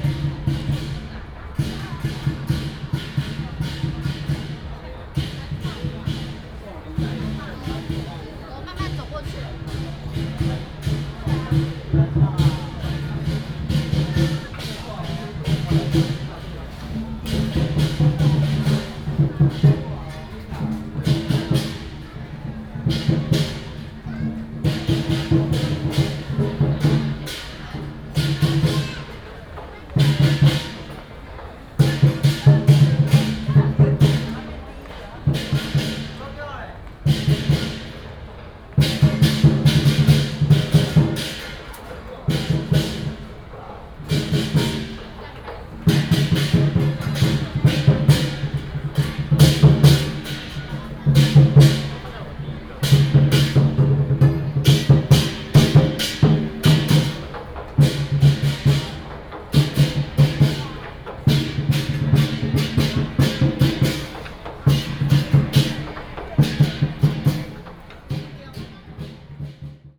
Yi 1st Rd., Zhongzheng Dist., 基隆市 - Keelung Mid.Summer Ghost Festival
Festivals, Walking on the road, Traditional and modern variety shows, Keelung Mid.Summer Ghost Festival
Zhongzheng District, Keelung City, Taiwan, 16 August